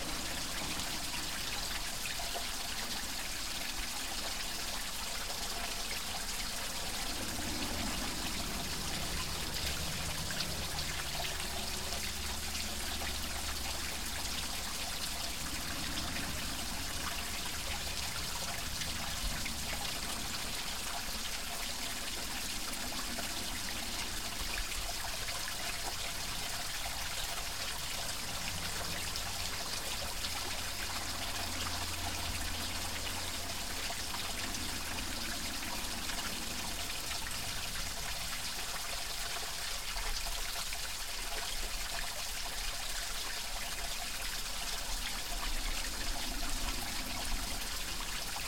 Stalos, Crete, at greek water distribution system
pipes with pipes and more pipes - greek water distribution system in the field
Stalos, Greece, May 2019